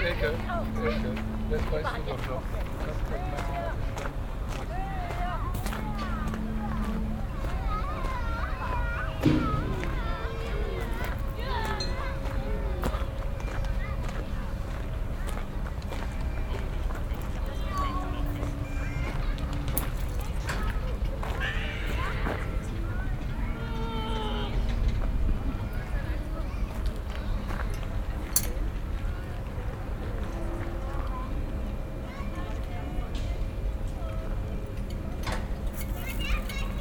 wolfsburg, autostadt, menschen + schaukeln am teich
besucher der autostadt auf schaukeln, absaugegeräusche dr teichanlage, im hintergrund klänge des wasserorchesters
soundmap:
topographic field recordings and social ambiences